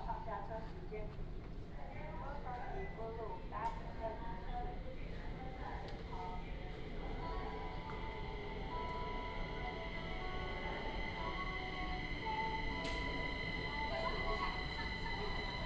Zuoying, Kaohsiung - Take the MRT
Take the MRT, In the compartment